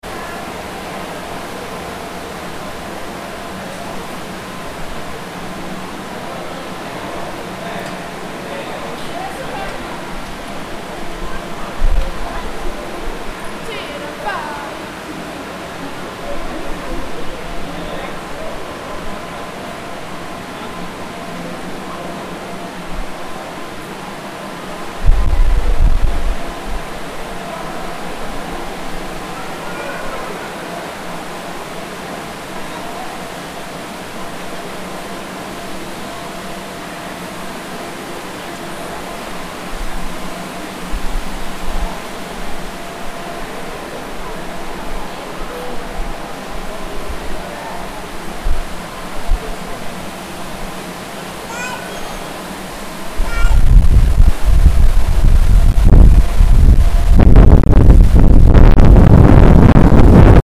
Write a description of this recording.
Waterfals, echoes and lots of air streams.